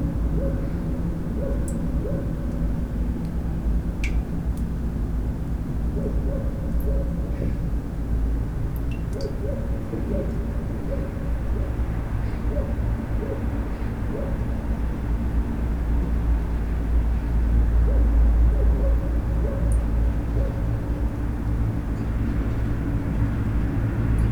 Lithuania, Atkociskes, in the tube
some water tube on the pathway
24 October 2012